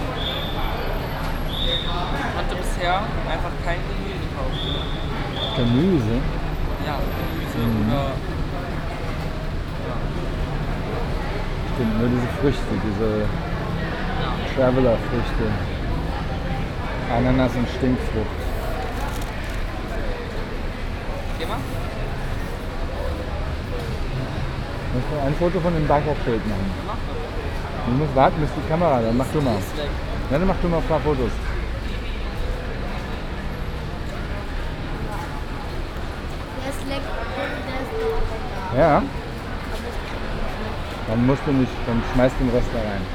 Rong Mueang Rd, Khwaeng Rong Muang, Khet Pathum Wan, Krung Thep Maha Nakhon, Thailand - Taxistand am Huang Lampong-Bahnhof in Bangkok
The atmosphere of traffic, people and the whistling of the taxi warden in the nicely reverberating front hall of the Huang Lampong train station in Bangkok, while waiting for the early morning train to Surathani to leave, my and my 2 sons with coffee, hot chocolate and pastry.
5 August 2017, ~07:00